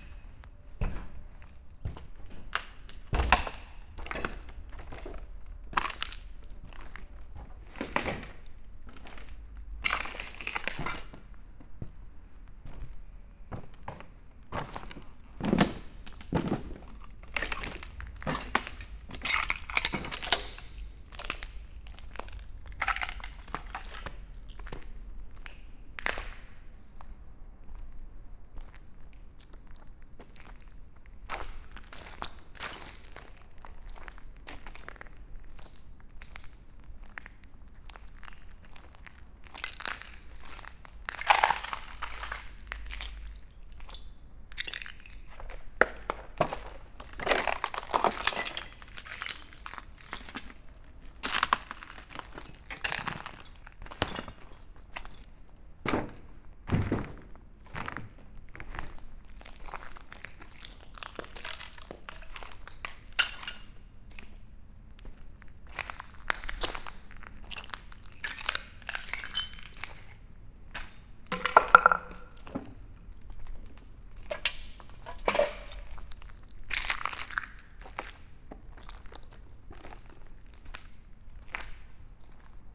In first, water drops falling from a rooftop. After, walking in the abandoned factory, on broken glass and garbage everywhere. This abandoned place is completely trashed.
Montataire, France, 20 July 2018, 11:30